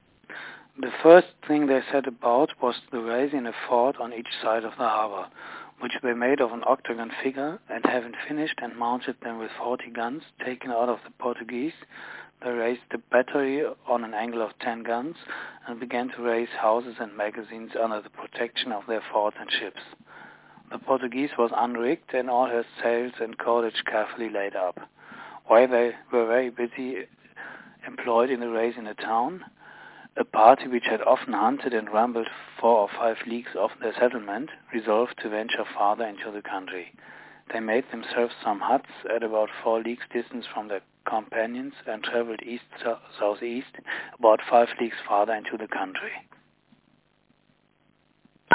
Libertatia - Of Captain Mission, Daniel Defoe
Libertatia, a free colony founded by Captain Mission in the late 1600s
Madagascar